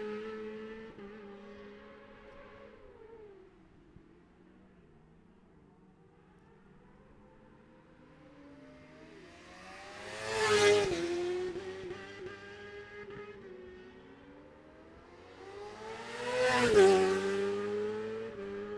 Jacksons Ln, Scarborough, UK - Gold Cup 2020 ...
Gold Cup 2020 ... 600 odd Qualifying ... Memorial Out ... dpas bag MixPre3 ...